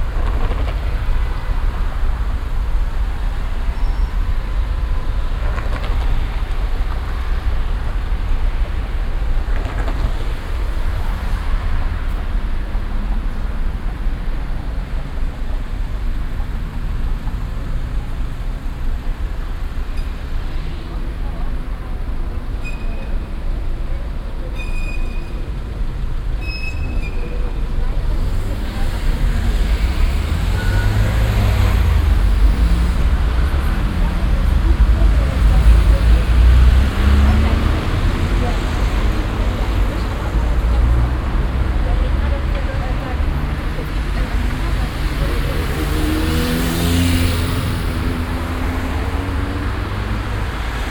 cologne, barbarossaplatz, verkehrszufluss pfälzer strasse - koeln, barbarossaplatz, verkehrszufluss pfälzerstrasse 02
strassen- und bahnverkehr am stärksten befahrenen platz von köln - aufnahme: nachmittags
soundmap nrw: